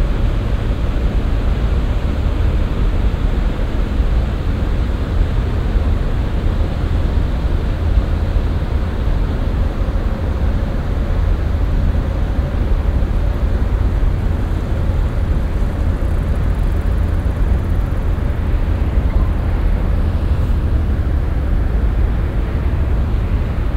cologne, rhein, rheinauhafen, zwei schiffe
project: social ambiences/ listen to the people - in & outdoor nearfield recordings